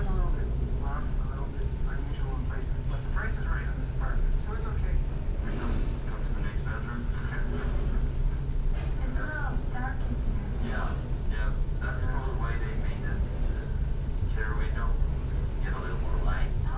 Munson Community Health Center, Traverse City, MI, USA - Waiting Room (Spine & Nerve Pain Treatment Center)
Quiet, carpeted room. Television on in the background. Stereo mic (Audio-Technica, AT-822), recorded via Sony MD (MZ-NF810).